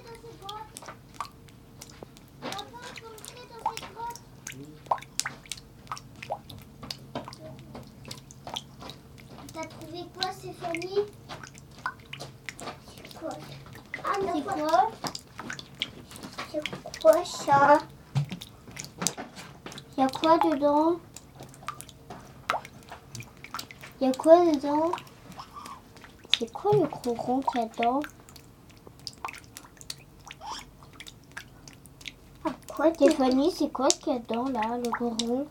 Gouttes d'eau dans la grotte, des enfants discutent autour.
Tech Note : Sony PCM-M10 internal microphones.
Nouvelle-Aquitaine, France métropolitaine, France